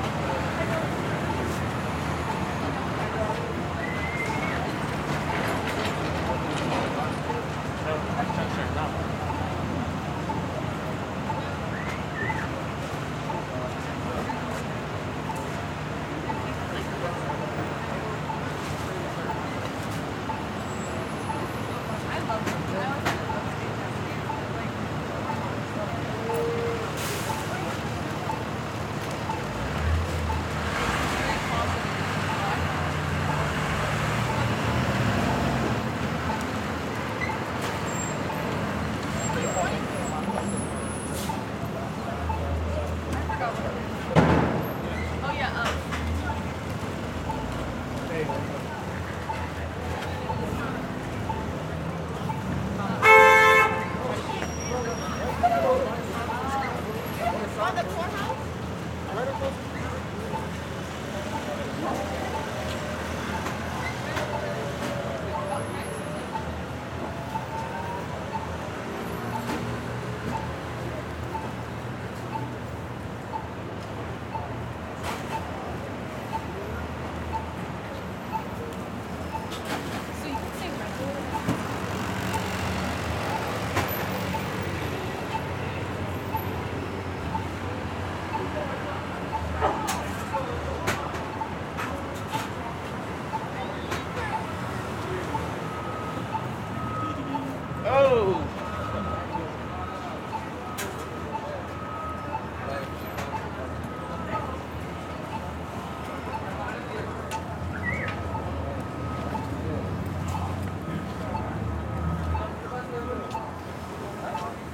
Willoughby St, Brooklyn, NY, USA - Street ambiance near a pizza joint
Street ambiance next to a pizza joint, Brooklyn Downtown.
United States